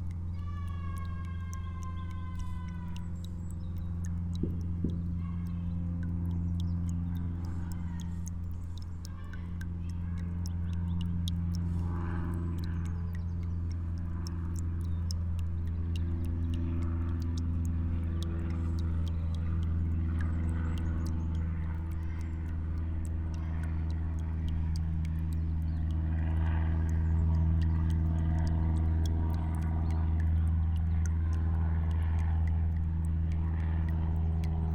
Colchester, Colchester, Essex, UK - Water dreaming in a stream
River dripping in a forest, around 3pm - quiet (ish) winter day in december, just after christmas.